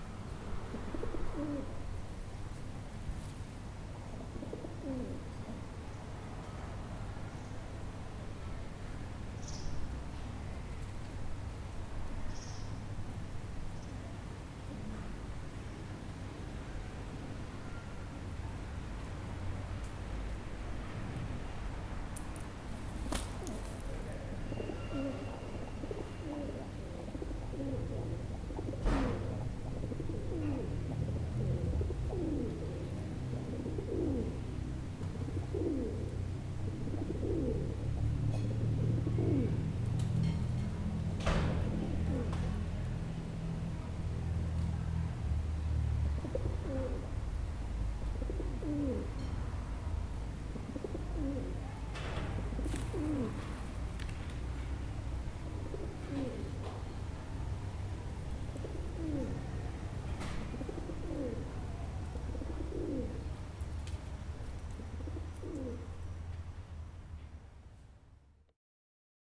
Zaloggou, Corfu, Greece - Leonida Vlachou Square - Πλατεία Λεωνίδα Βλάχου
A flock of pigeons. In the background cars from the nearby street.